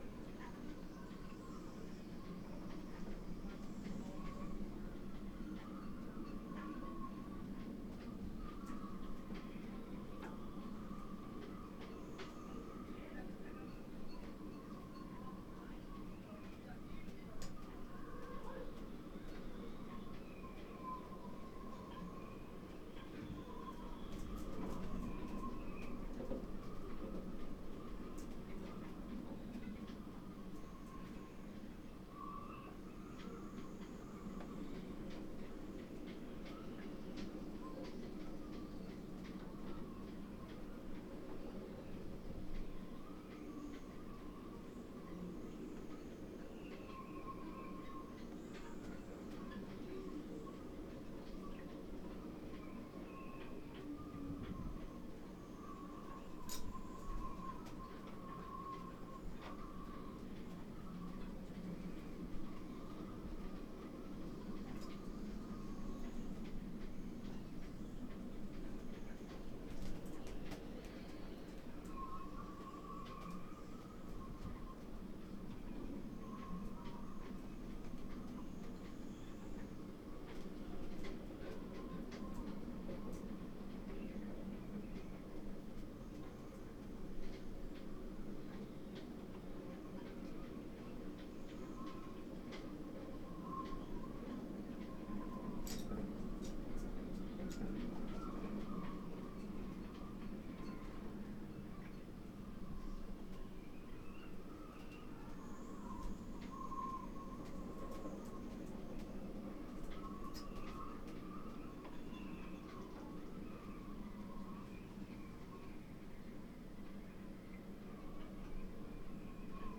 workum, het zool: marina, berth h - the city, the country & me: marina, aboard a sailing yacht
wind flaps the tarp, voices outside
the city, the country & me: july 18, 2009
Workum, The Netherlands, 18 July 2009, 13:37